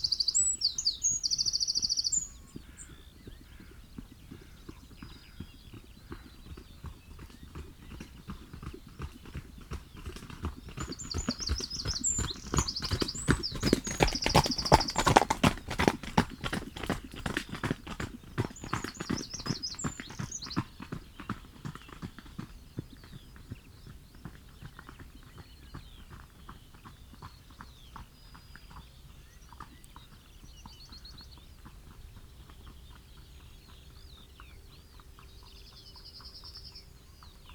Luttons, UK - up and down a country lane ... on a horse ...
up and down a country lane ... on a horse ... recording near a willow warbler nest ... and got this as well ... open lavalier mics clipped to a sandwich box ... bird calls and song from ... wren ... crow ... yellowhammer ... skylark ... song thrush ... linnet ... blackbird ...